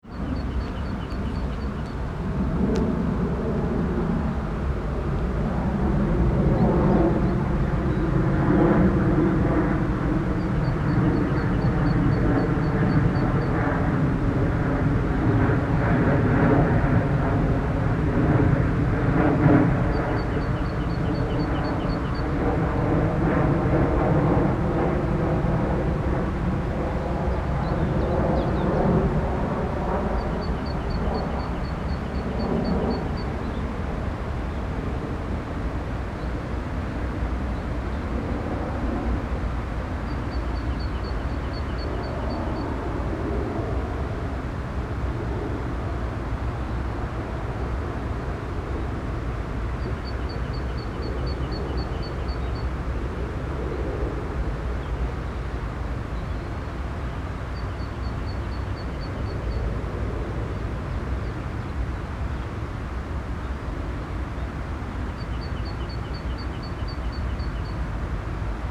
essen, schurenbachhalde, bramme, installation von richard serra - essen, schurenbachhalde
Eine weitere Aufnahme an der Richard Serra Installation, einige Jahre später. Der Klang eines Flugzeugs, das das Gelände überquert, das Rauschen der nahen Autobahn, einige Krähen.
A second recording at the installation of Richard Serra, some years later. The sound of a plane crossing the areal and the sound of the traffic from the nearby highway, some crows flying across the platform.
Projekt - Stadtklang//: Hörorte - topographic field recordings and social ambiences